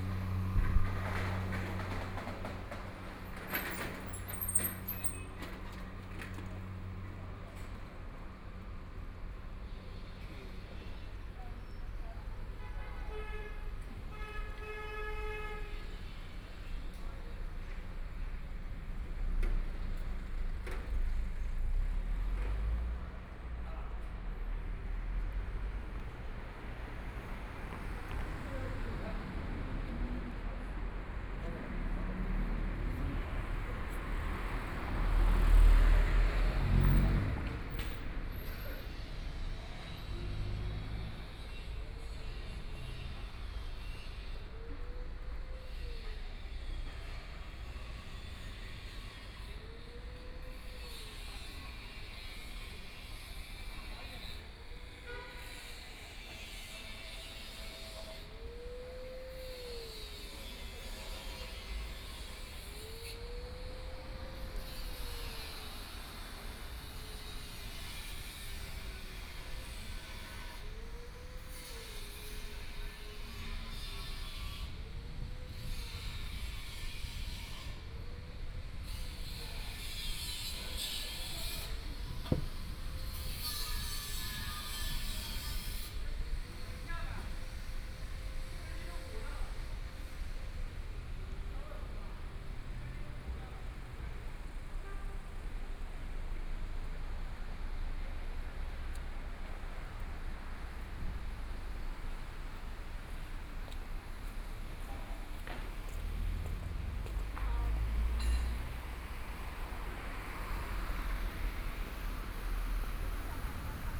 Sichuan Road, Shanghai - Small streets at night
Small streets at night, Traffic Sound, Old small streets, Narrow channel, Binaural recordings, Zoom H6+ Soundman OKM II